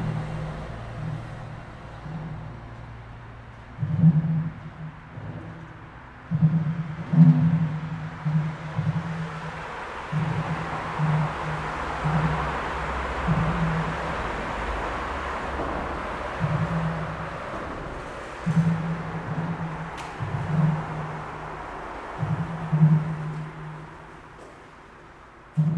under a bridge in Kiel
unusual harmonic knocking sound as cars pass the road above. thanks to Ramona for showing me this space.